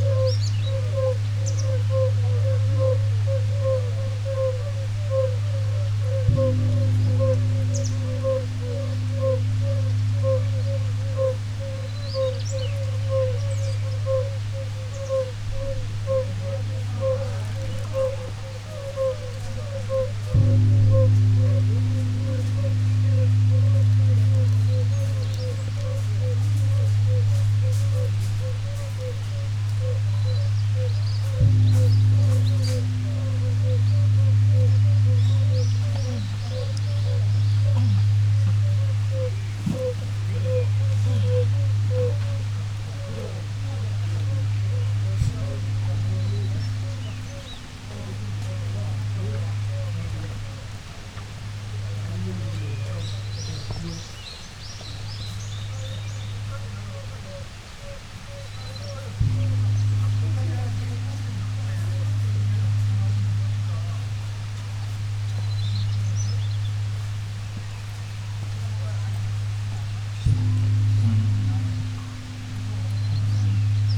건봉사 Geonbongsa - Fire-bellied toads and Geonbongsa large bell
by chance...it may be that these vocalists are Asian fire-bellied toads
Goseong-gun, Gangwon-do, South Korea, 26 May 2018